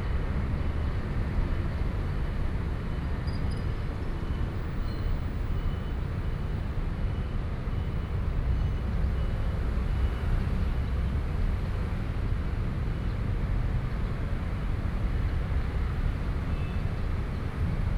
East-Gate, Hsinchu City - Traffic Noise
Traffic Noise, Sony PCM D50 + Soundman OKM II